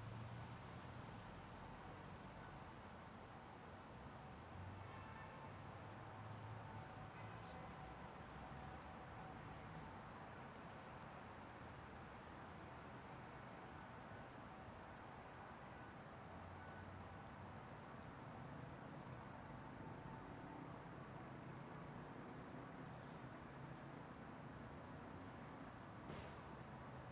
stuttgart, urban park
sunday in the urban park
Stuttgart, Germany, July 2011